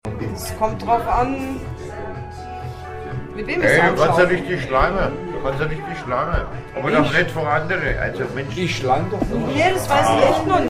{"title": "Nürnberg, Uschis Bierbar", "description": "controversy of two drunken guys at uschis bierbar.", "latitude": "49.45", "longitude": "11.08", "altitude": "314", "timezone": "GMT+1"}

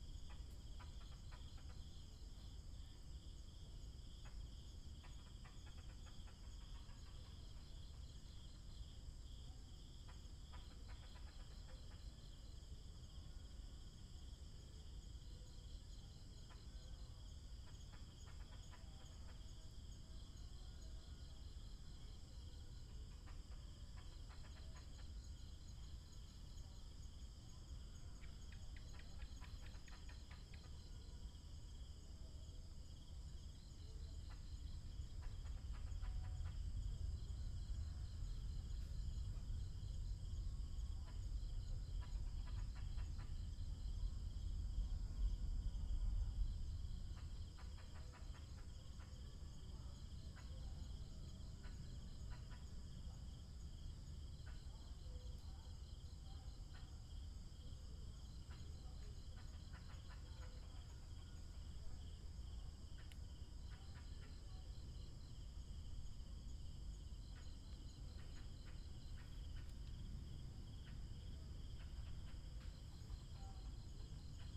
{"title": "新竹市立自由車場, Hsinchu City - Facing the woods", "date": "2017-09-21 05:19:00", "description": "early morning, Insects sound, Squirrel call, birds sound, Binaural recordings, Sony PCM D100+ Soundman OKM II", "latitude": "24.79", "longitude": "120.98", "altitude": "76", "timezone": "Asia/Taipei"}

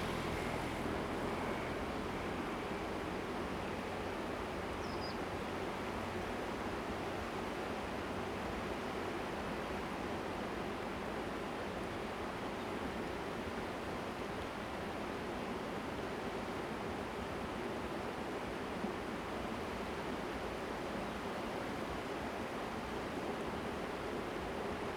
{"title": "太麻里鄉金崙溪, Taitung County - stream sound", "date": "2018-04-01 16:38:00", "description": "stream sound, On the river bank, Bird call\nZoom H2n MS+XY", "latitude": "22.53", "longitude": "120.94", "altitude": "40", "timezone": "Asia/Taipei"}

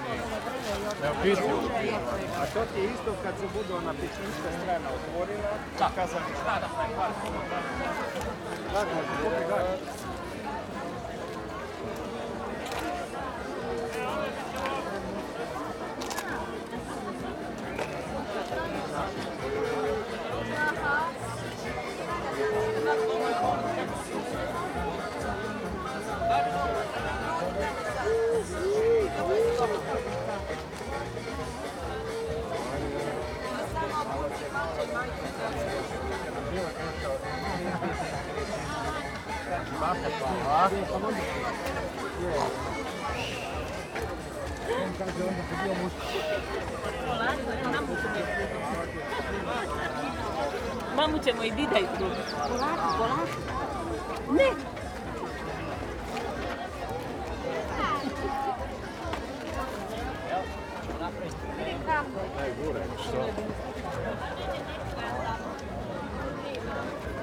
{"title": "Kvarner, Kastav, Bela Nedeja, Traditional Fair", "description": "Traditional three-day fair honouring new wine mentioned as early as in the Codex of Kastav dated from 1400. Provision of versatile fair merchandise is accompanied by cultural and entertainment programme.", "latitude": "45.37", "longitude": "14.35", "altitude": "340", "timezone": "Europe/Berlin"}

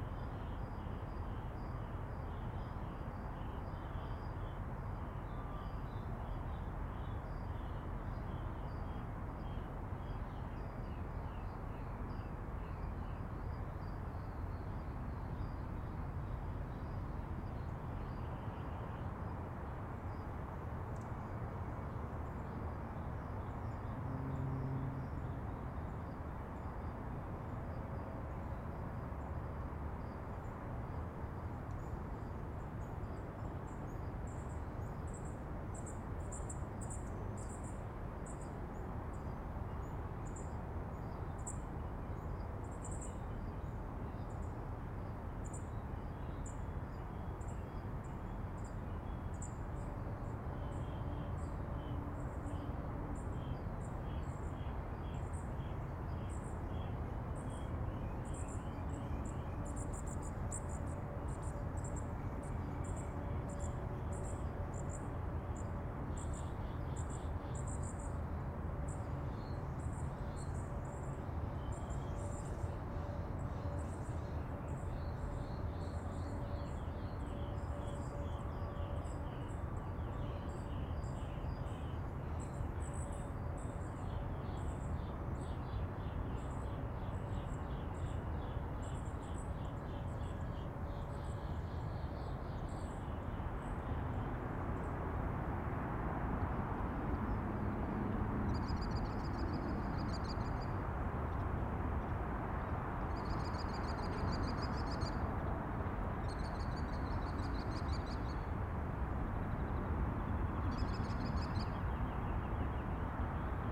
{"title": "Summit of Bernal Hill, Dawn World Listening Day", "date": "2011-07-18 05:00:00", "description": "Freeways US101 and I-280, left-over 4th of July fireworks in Mission District, California towhee? hummingbird? dark-eyed junco trills and tsits, mockingbird, mourning dove, ships whistle, American kestrel, fire engine sirens, joggers, World LIstening DAy", "latitude": "37.74", "longitude": "-122.41", "altitude": "137", "timezone": "America/Los_Angeles"}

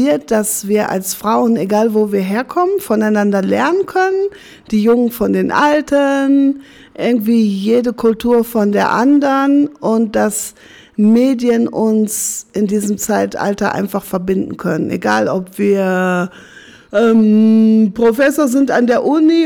{"title": "Office of AfricanTide Union, Dortmund - jede Frau hat was zu erzählen...", "date": "2018-02-10 11:20:00", "description": "we are at the office of AfricanTide… Marie and Joy talk about the value of active media work for women. How can we gain the know-how. By doing it of course, and learning from each other…\nthe recording was produced during media training for women in a series of events at African Tide during the annual celebration of International Women’s Day.", "latitude": "51.52", "longitude": "7.47", "altitude": "83", "timezone": "Europe/Berlin"}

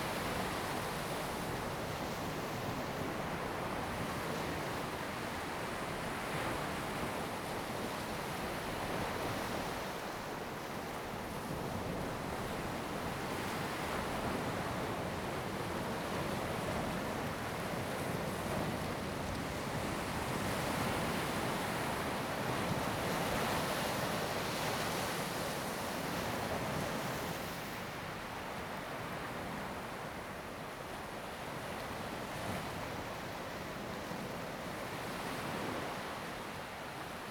{"title": "Koto island, Lanyu Township - In the beach", "date": "2014-10-29 17:30:00", "description": "In the beach, Sound of the waves, Tribal broadcast message\nZoom H2n MS +XY", "latitude": "22.03", "longitude": "121.55", "altitude": "10", "timezone": "Asia/Taipei"}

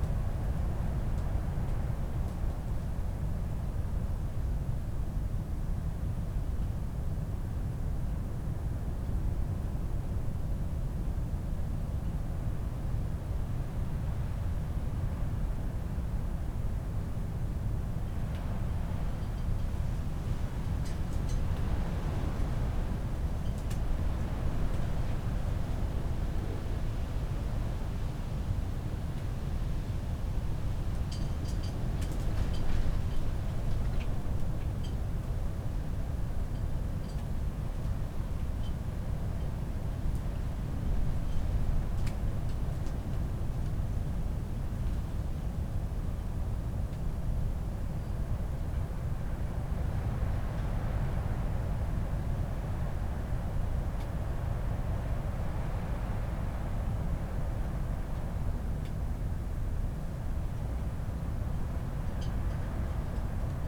{"title": "Chapel Fields, Helperthorpe, Malton, UK - inside poly tunnel ... outside approaching storm ...", "date": "2019-03-03 21:00:00", "description": "inside poly tunnel ... outside approaching storm ... lavalier mics clipped to sandwich box ...", "latitude": "54.12", "longitude": "-0.54", "altitude": "77", "timezone": "GMT+1"}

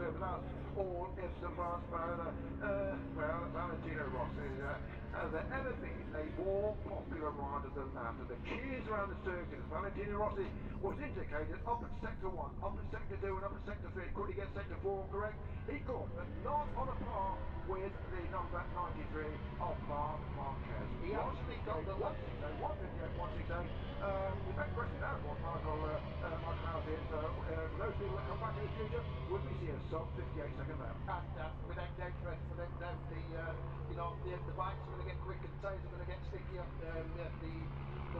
{"title": "Silverstone Circuit, Towcester, UK - british motor cycle grand prix 2019 ... moto grand prix ... q2 ...", "date": "2019-08-24 14:35:00", "description": "british motor cycle grand prix 2019 ... moto grand prix qualifying two ... and commentary ... copse corner ... lavalier mics clipped to sandwich box ...", "latitude": "52.08", "longitude": "-1.01", "altitude": "158", "timezone": "Europe/London"}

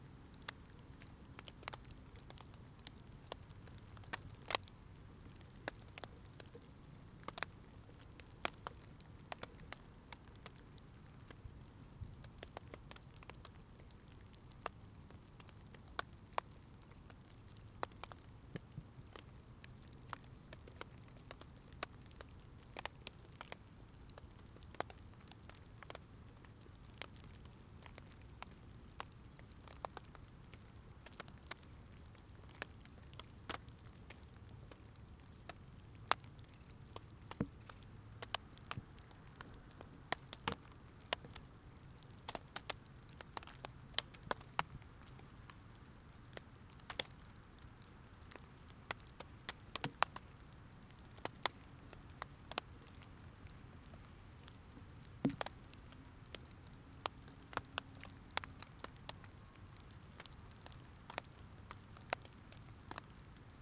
{"title": "Blue Heron Trail, Valley Park, Missouri, USA - Blue Heron Trail", "date": "2021-01-01 16:02:00", "description": "Recording of water falling from tree into wet leaves on ground just off the Blue Heron Trail", "latitude": "38.55", "longitude": "-90.47", "altitude": "132", "timezone": "America/Chicago"}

